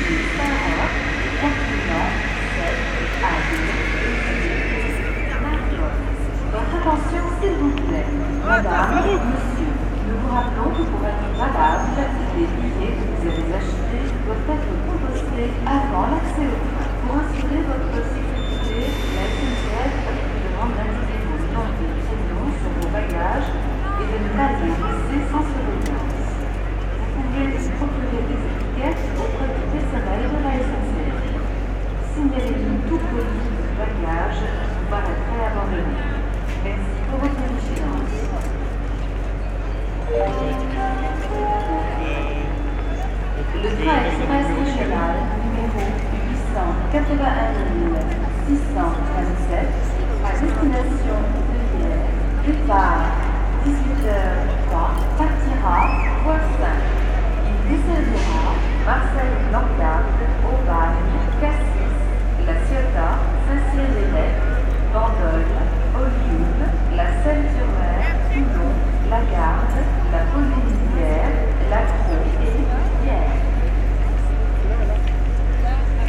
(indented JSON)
{
  "title": "Belsunce, Marseille, France - Gare Saint Charles - Jérome Noirot from SATIS",
  "date": "2012-02-27 18:00:00",
  "description": "Départs et arrivées des trains, voyageurs annonces...\nIntérieur et extérieur de la gare",
  "latitude": "43.30",
  "longitude": "5.38",
  "altitude": "53",
  "timezone": "Europe/Paris"
}